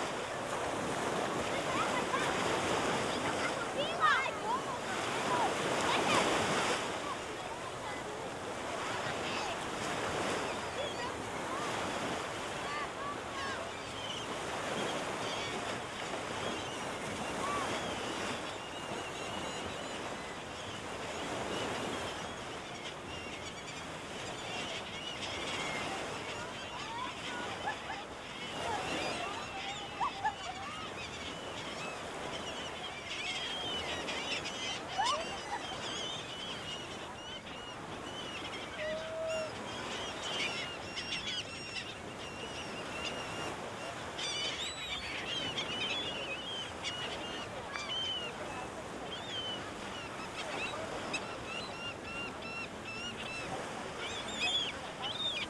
C., Boulevard Turístico Bording, Progreso, Yuc., Mexique - Progresso - Plage
Progresso - Mexique
Ambiance plage
Yucatán, México